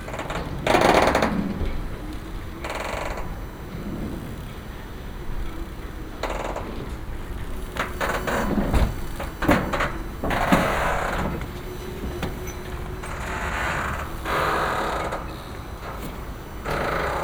Marina Deportiva del Puerto de Alicante, Alicante, Spain - (21 BI) Boats creaking and crackling + RF interferences
Boats squeaking, creaking, and crackling with parts of interesting RF interferences.
binaural recording with Soundman OKM + Zoom H2n
sound posted by Katarzyna Trzeciak